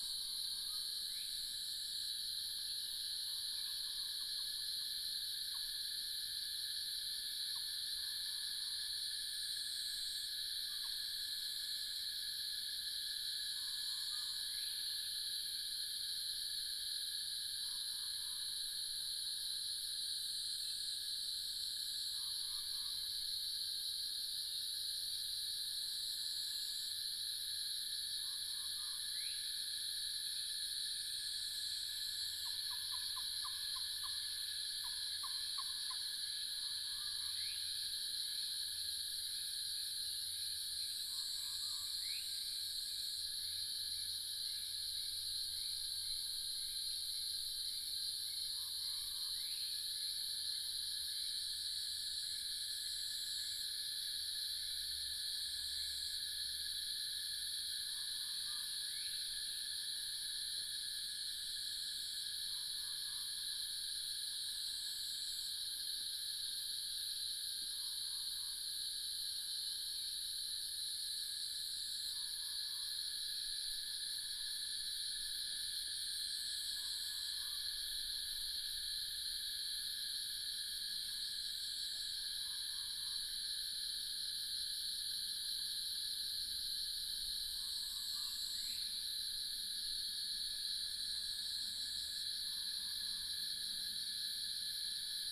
early morning, Birds and Cicada sounds
Yuchi Township, 華龍巷43號, 8 June 2016